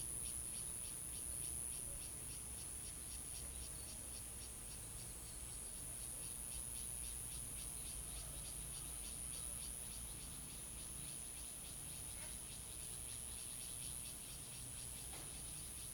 羅浮壽山宮, Fuxing Dist., Taoyuan City - Small temple
Small temple, Bird call, Cicada sound, The plane flew through
Zoom H2n MS+XY